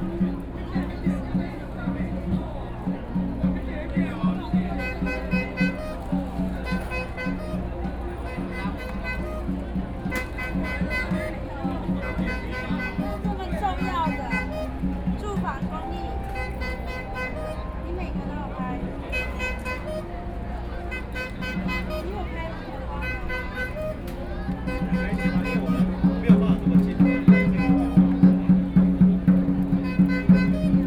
National Chiang Kai-shek Memorial Hall - Square entrance
Drum, Selling ice cream sound, Binaural recordings, Sony PCM D50 + Soundman OKM II
Taipei City, Taiwan, 10 October 2013